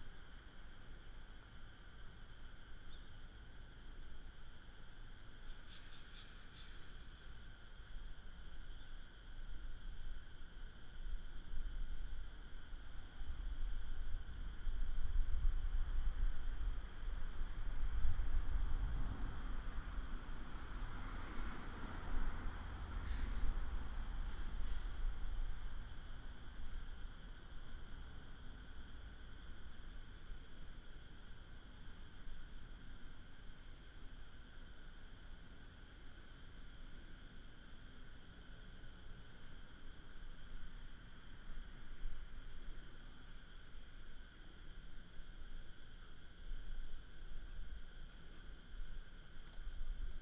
新屋天后宮, Taoyuan City - in the square of the temple
Late at night in the square of the temple, traffic sound, Insects, Frogs, Binaural recordings, Sony PCM D100+ Soundman OKM II